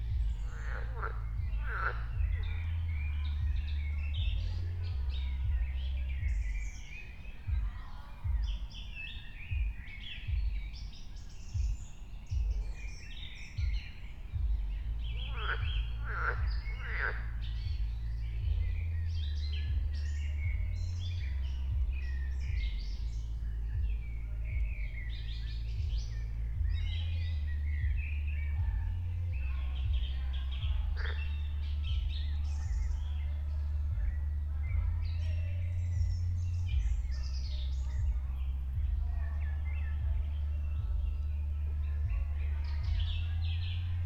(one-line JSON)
{"title": "Berlin, Königsheide, Teich - pond ambience /w frogs and remains of a rave", "date": "2021-07-04 04:00:00", "description": "04:00 Berlin, Königsheide, Teich - pond ambience. Somewhere nearby a rave happend the night before, still music and people around.", "latitude": "52.45", "longitude": "13.49", "altitude": "38", "timezone": "Europe/Berlin"}